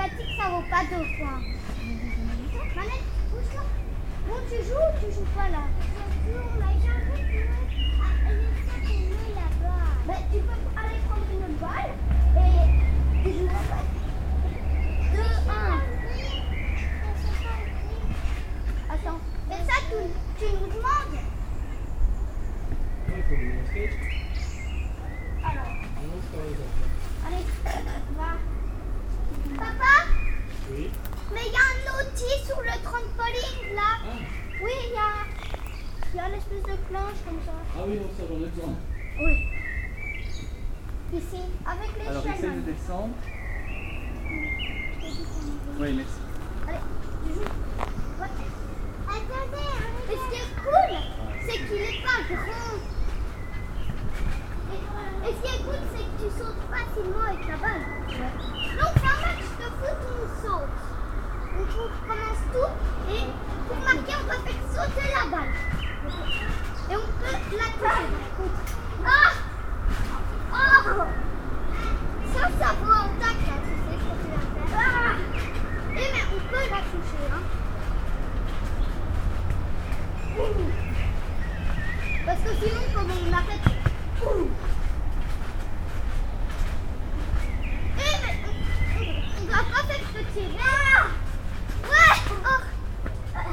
Mont-Saint-Guibert, Belgique - Children playing
Children are playing with a trampoline in the garden. It's a saturday evening and all is quiet.